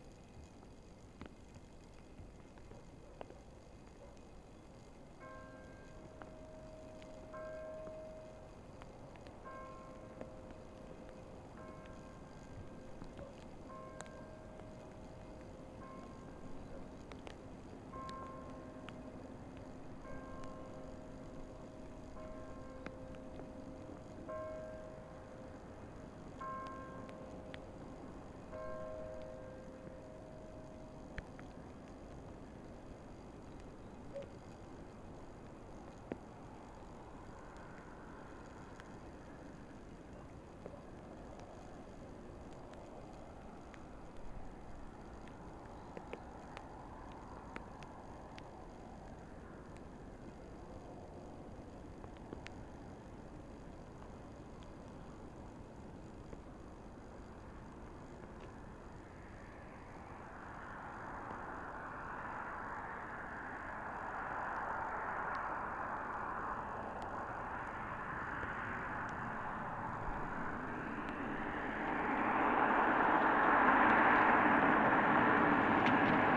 Recorded during first lockdown, in the field near the road (1km from the church was the limit authorized).
Zoom H6 capsule xy
Drizzle and mist.